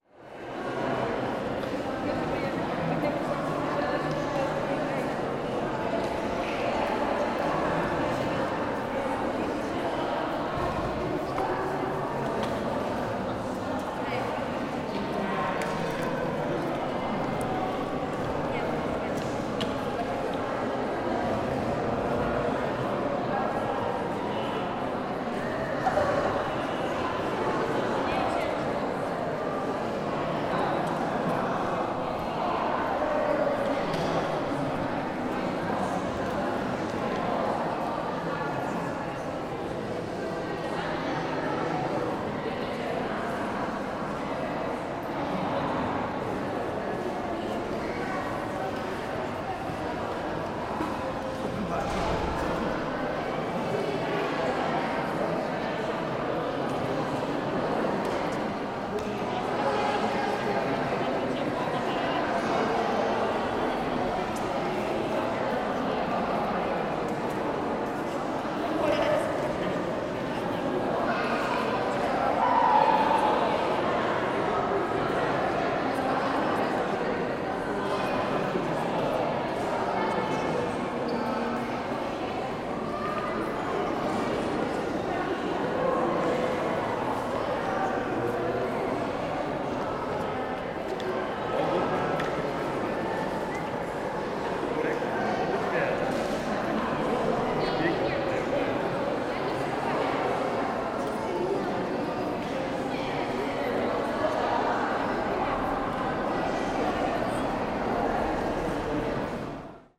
Small hall ambience at Opole main train station. There was a large group of people at the moment of recording. Gear used: Sony PCM-D100.